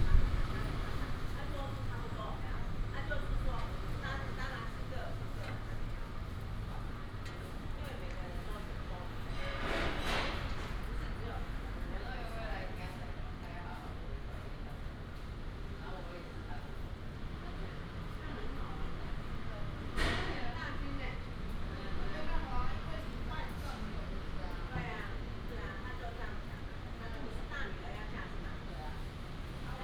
北門市場, Hsinchu City - in the market
At the door of the market, Binaural recordings, Sony PCM D100+ Soundman OKM II
Hsinchu City, Taiwan